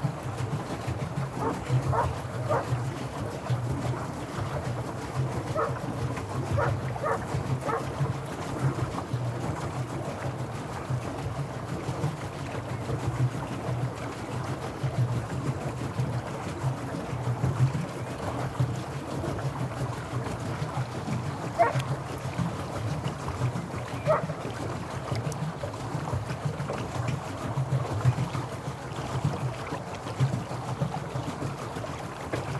Rummelsburger See, Berlin, Deutschland - Tretboot
ride on a pedal boat, on the river Spree.
(Sony PCM D50 120°)